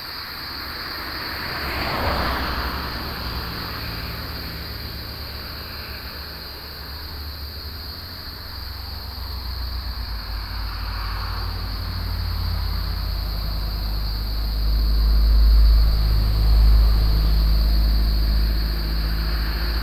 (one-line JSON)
{"title": "內山公路, Longtan Dist., Taoyuan City - Next to the road", "date": "2017-07-25 06:34:00", "description": "Next to the road, Traffic sound, Cicadas", "latitude": "24.83", "longitude": "121.20", "altitude": "277", "timezone": "Asia/Taipei"}